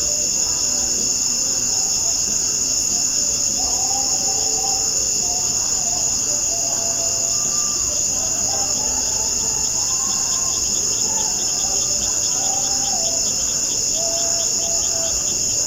St. Gabriels School, Fontaine, Haiti - Fontaine Community Singing Heard from Rooftop at Night
Fontaine is a hamlet roughly an hours walk or a 15 minute moto ride from Pignon, one city in the Nord Department in central Haiti. The recording was done via H2N from the rooftop of a two-story school, recording the sounds of the night, which predominately features a gathering of song, likely though not yet confirmed to be by members of the Voodoo community.
Département du Nord, Haiti